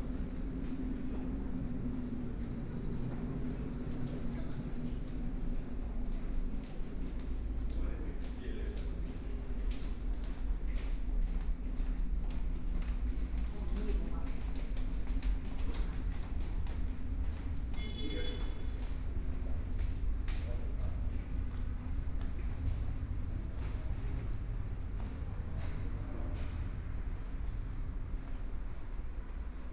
Changshu Road Station, Shanghai - In the station
Walking through the subway station, Binaural recording, Zoom H6+ Soundman OKM II